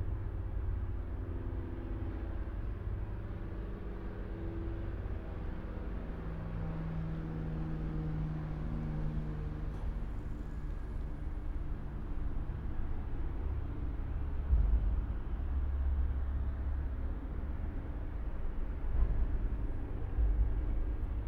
Floridsdorfer Hauptstraße, Wien, Austria - Trains on Floridsdorf bridge
Recording under Florisdorf bridge. Trains are passing by, little plane is flying.
Österreich, 15 August